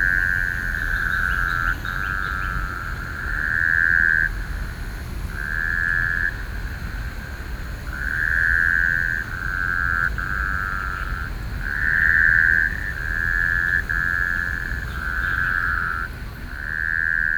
Pingxi District, New Taipei City - The frogs
Pingxi District, 四廣潭, 13 November